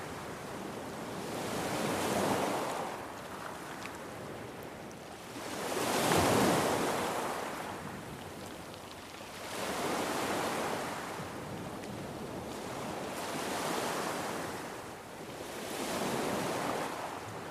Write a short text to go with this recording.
The waves of the eastern rocky beach of Niaqornat on a moderately windy day. Recorded with a Zoom Q3HD with Dead Kitten wind shield.